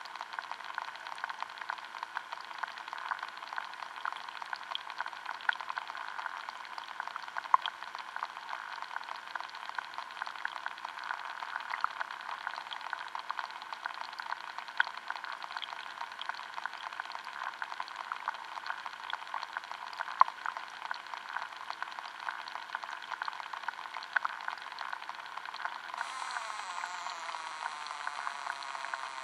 hydrophone recording in Mooste lake Estonia